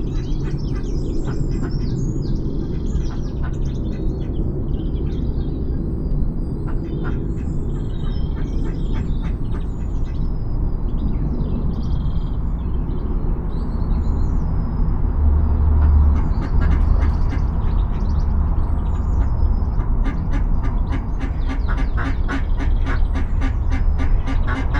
The Mallard are visiting not nesting here this year. Humans pass by in cars and motorbikes and planes. An Airedale two houses away barks and the Mallard argue as usual.